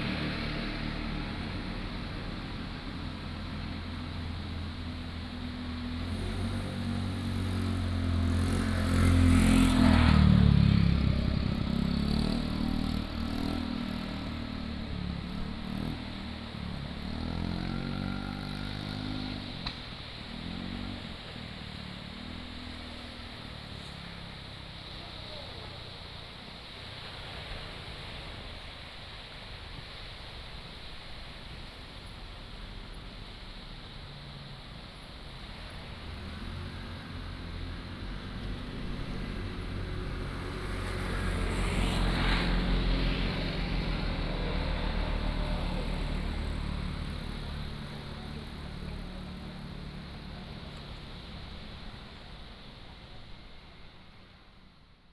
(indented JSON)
{
  "title": "南寮村, Lüdao Township - Sitting on the banks",
  "date": "2014-10-30 18:55:00",
  "description": "Sitting on the banks, Traffic Sound, Sound of the waves",
  "latitude": "22.67",
  "longitude": "121.47",
  "altitude": "7",
  "timezone": "Asia/Taipei"
}